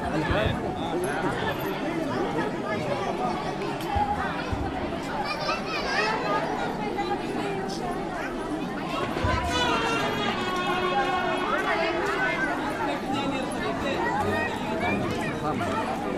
India, Karnataka, Hampi, Virupaksha temple, marriage, music
Hampi, Karnataka, India, 27 February 2009